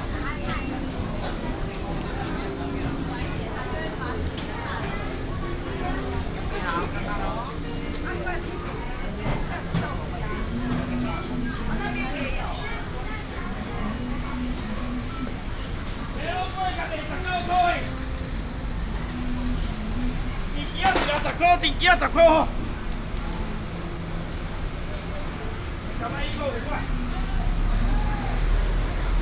仁化黃昏市場, Dali Dist., Taichung City - in the dusk market
walking in the dusk market, Traffic sound, vendors peddling, Binaural recordings, Sony PCM D100+ Soundman OKM II
Taichung City, Taiwan